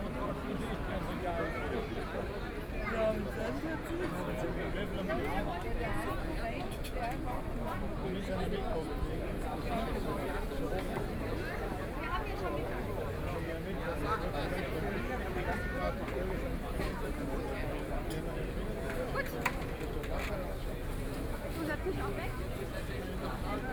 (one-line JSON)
{"title": "Viktualienmarkt, Munich - In the market", "date": "2014-05-10 12:27:00", "description": "In the market, holidays, Football fan", "latitude": "48.14", "longitude": "11.58", "altitude": "520", "timezone": "Europe/Berlin"}